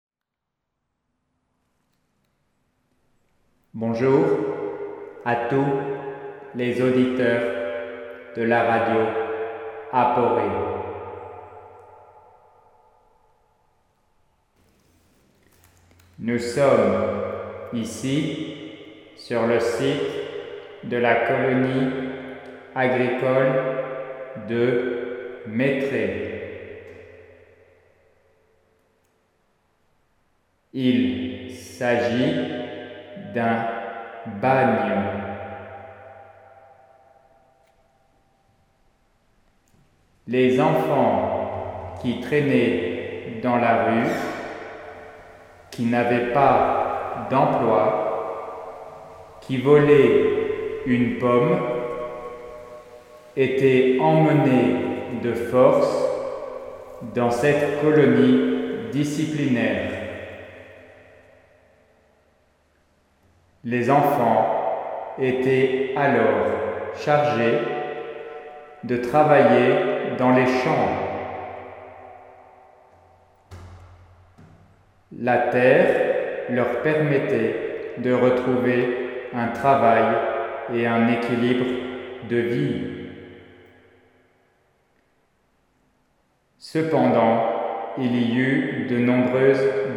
Mettray, France - Cistern
Into a huge plastic cistern, I explain slowly the place history. As I especially like cistern and objetcs like that, I often speak into, in aim to play with the reverb effect. I'm absolutely not use with the plastic object, in fact here it's an agricultural fiberglass object. This one has a special tube and metal feeling. As it's quite sharp, it's not necessarily the one I prefer.
12 August 2017, 14:00